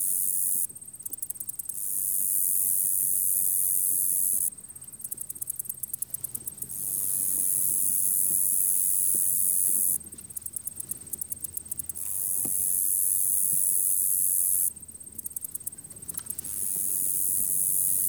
tallgrass prairie preserve, KS, USA - insect chorus 1 tallgrass prairie preserve
Strong City, KS, USA, 22 September 2018, ~15:00